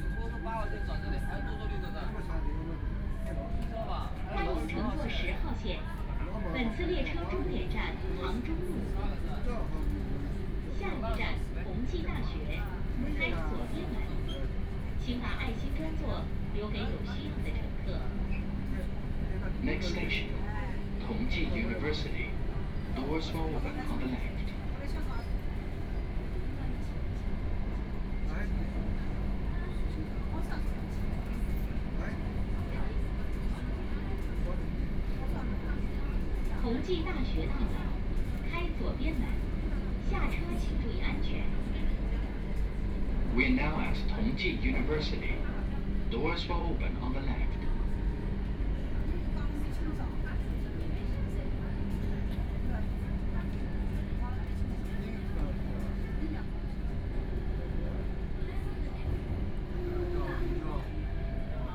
Yangpu District, Shanghai - The elderly and children
The elderly and children, from Wujiaochang station to Siping Road station, Binaural recording, Zoom H6+ Soundman OKM II
Shanghai, China, November 2013